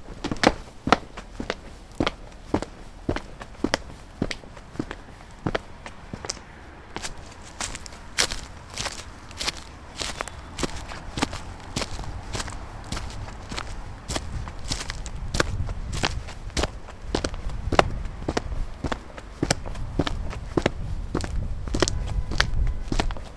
Hansen House, Jerusalem, Israel - Footsteps in Garden of Hansen House
Walking in the garden and paths of the Hansen hospital exterior. Uploaded by Josef Sprinzak
January 2014